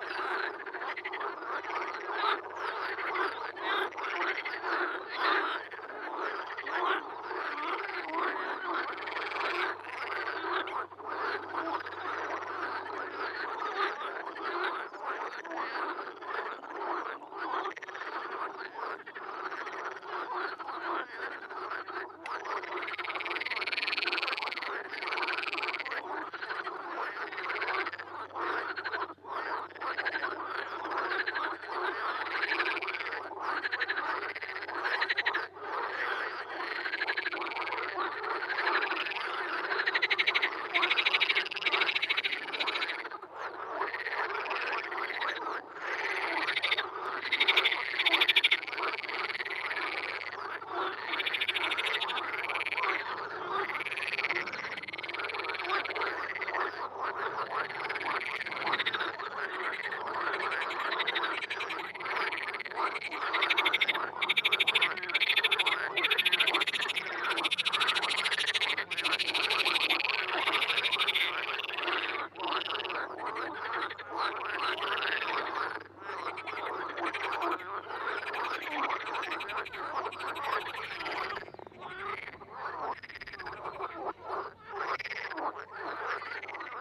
crazy frogs at concert, little pond behind the dike
Germany, 23 May 2010